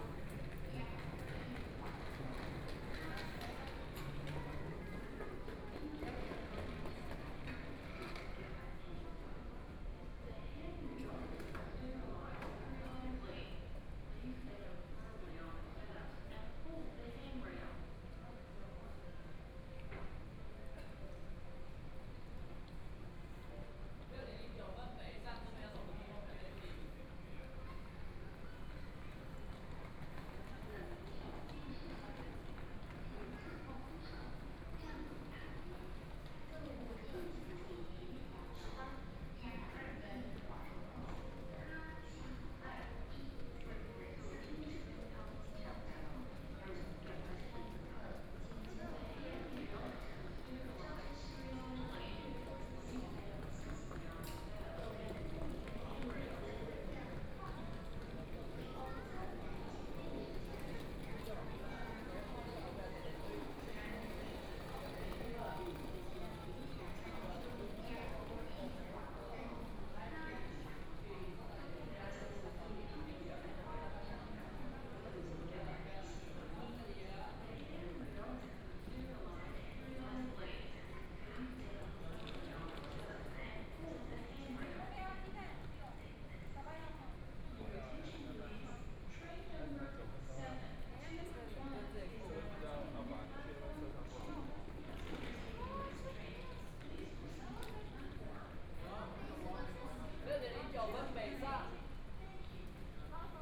{"title": "Chiayi Station, Taiwan High Speed Rail - At the station", "date": "2014-02-01 18:12:00", "description": "At the station, Zoom H4n+ Soundman OKM II", "latitude": "23.46", "longitude": "120.32", "timezone": "Asia/Taipei"}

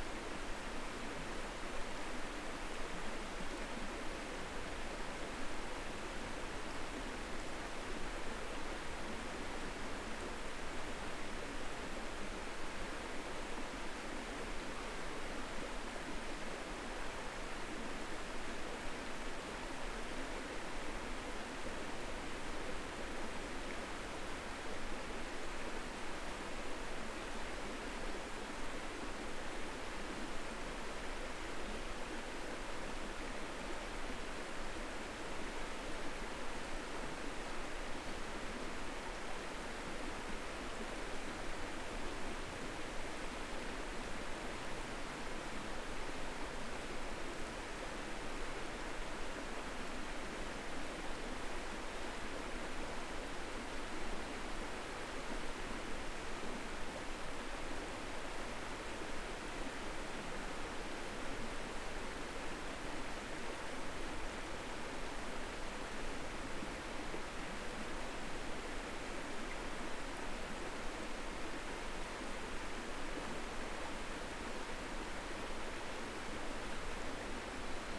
{"date": "2022-04-13 19:13:00", "description": "Sounds of the Gulpha Gorge Campground inside Hot Springs National Park. Gulpha Creek behind the campsite is heard as well as some road traffic, campground noises, and some sirens.\nRecorded with a Zoom H5", "latitude": "34.52", "longitude": "-93.04", "altitude": "175", "timezone": "America/Chicago"}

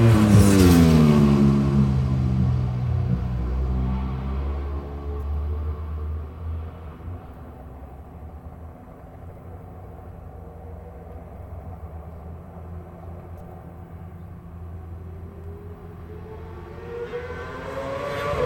{"title": "West Kingsdown, UK - World Superbikes 2002 ... Qual(contd)", "date": "2002-07-27 11:30:00", "description": "World Superbikes 2002 ... Qual(contd) ... one point stereo mic to minidisk ...", "latitude": "51.35", "longitude": "0.26", "altitude": "152", "timezone": "Europe/London"}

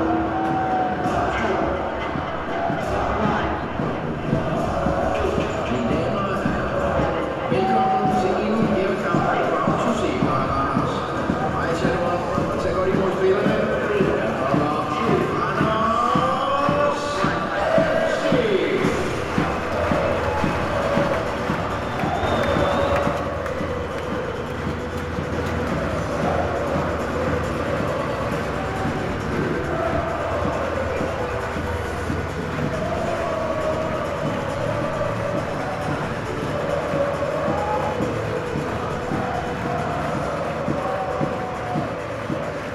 {"title": "Randers NV, Randers, Danmark - Match start at local stadium", "date": "2015-04-26 19:00:00", "description": "Randers against FC Copenhagen, at the presentation of the players.", "latitude": "56.47", "longitude": "10.01", "altitude": "17", "timezone": "Europe/Copenhagen"}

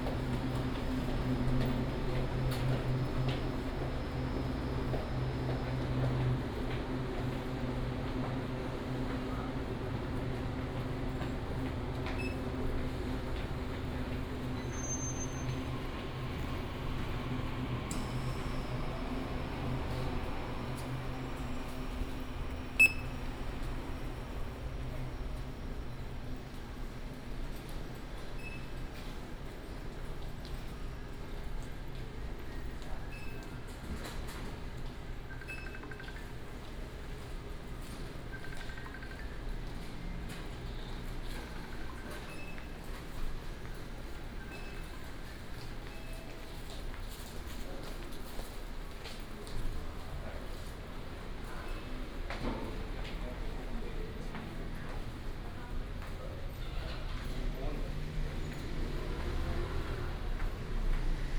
{"title": "大林火車站, Dalin Township, Chiayi County - Walking at the station", "date": "2018-02-15 10:16:00", "description": "From the station platform through the hall to the exit direction, The train arrived at the station, lunar New Year\nBinaural recordings, Sony PCM D100+ Soundman OKM II", "latitude": "23.60", "longitude": "120.46", "altitude": "33", "timezone": "Asia/Taipei"}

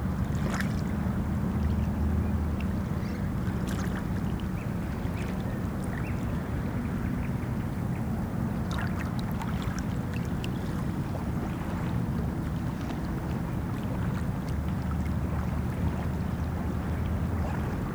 {"title": "Viimsi beach", "date": "2010-05-19 16:47:00", "description": "Swans and noise from harbour in the evening", "latitude": "59.51", "longitude": "24.81", "altitude": "7", "timezone": "Europe/Tallinn"}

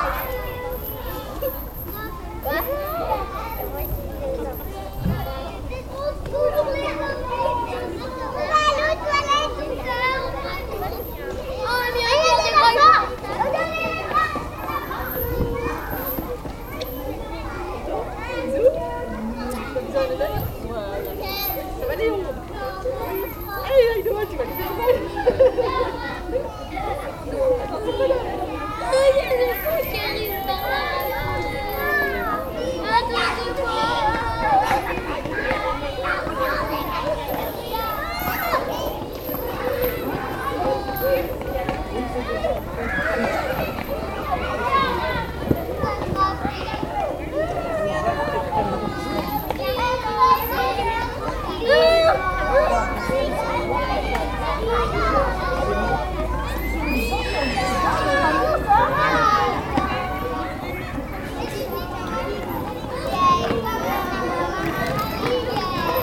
{"title": "Court-St.-Étienne, Belgique - Wisterzée school", "date": "2015-09-07 07:45:00", "description": "Children playing in the Wisterzée school.", "latitude": "50.65", "longitude": "4.56", "altitude": "87", "timezone": "Europe/Brussels"}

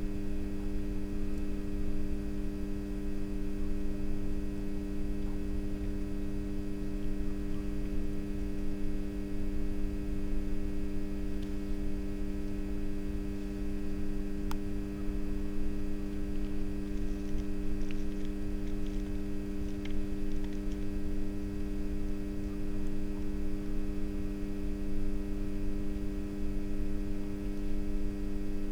{"title": "R. Dom Álvaro Afonso, Bordeira, Portugal - power pole hum, night", "date": "2017-10-28 23:35:00", "description": "quiet village of Bordeira at night, near by a power pole, electric hum (Sony PCM D50, Primo EM172)", "latitude": "37.20", "longitude": "-8.86", "altitude": "23", "timezone": "Europe/Lisbon"}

